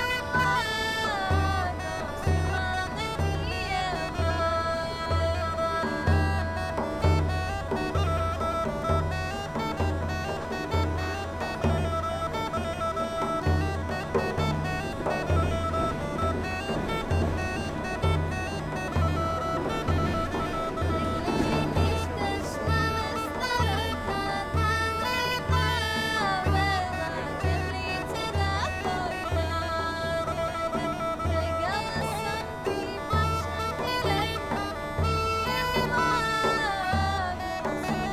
Sofia Center, Sofia, Bulgaria - street musicians - voice, gaida, and dayre